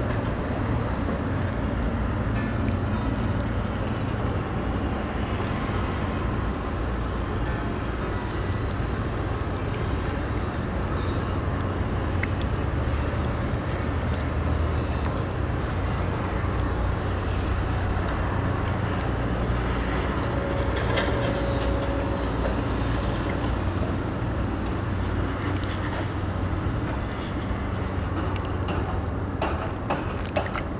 Maribor, Slovenia - one square meter: urban noise along the riverbank
construction noise and the rumble of traffic on a nearby bridge, along with the lapping riverwater, crickets, and an occasional swan, recorded from the ruins of a staircase down to the water from what is now a parking lot.. all recordings on this spot were made within a few square meters' radius.